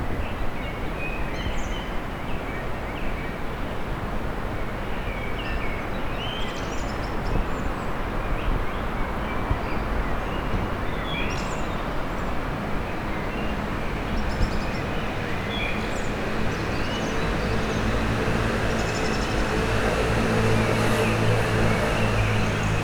Innsbruck, vogelweide, Waltherpark, Österreich - Frühling im Waltherpark/vogelweide, Morgenstimmung
walther, park, vogel, weide, vogelgezwitscher, autoverkehr, stadtgeräusche, singende vögel, winterzeit gegen 5:44, waltherpark, vogelweide, fm vogel, bird lab mapping waltherpark realities experiment III, soundscapes, wiese, parkfeelin, tyrol, austria, anpruggen, st.